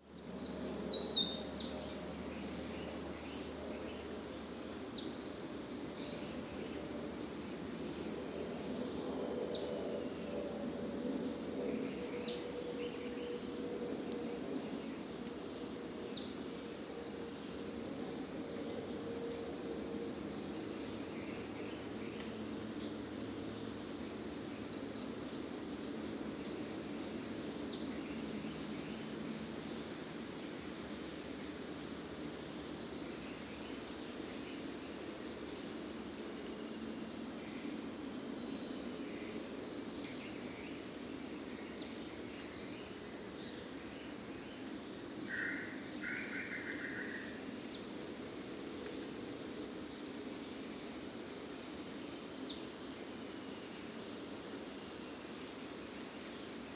Parque da Cantareira - Núcleo do Engordador - Trilha da Mountain Bike - i
Register of activity in the morning.